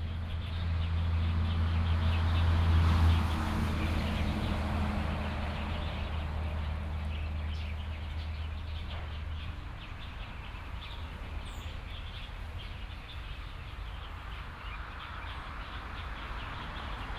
Gengsheng N. Rd., Beinan Township - Roadside woods
Traffic Sound, Birds Chicken sounds, Binaural recordings, Zoom H4n+ Soundman OKM II ( SoundMap2014016 -9)